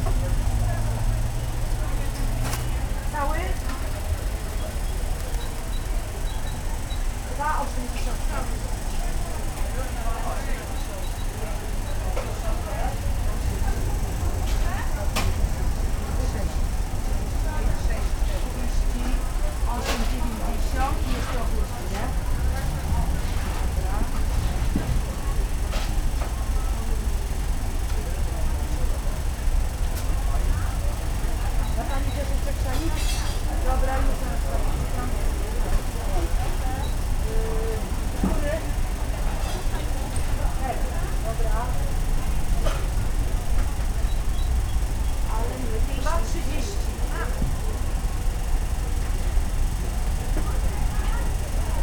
Jezycki Market, Poznan - market fan
recored at a vegetable market located in the heart od Jezyce district. vendors offering their goods, packing items. rumor of customers moving around, asking about prices and availability of produce. traffic from streets around the market. clearly audible rattle and hum is coming from a nearby fan attached to the stall in order to move air under thick stall roofing. (roland r-07)